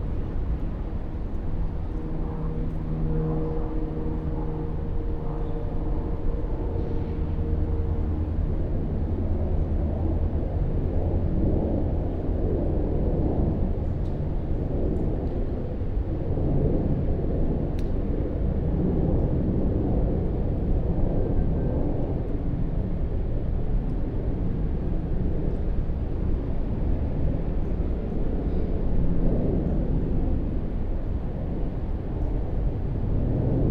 Crossland Rd, Reading, UK - Abbey Amphitheatre
10 minute meditation sitting at the bottom of the small amphitheatre behind Reading Library (spaced pair of Sennheiser 8020s with SD MixPre6)
8 November 2017, 13:20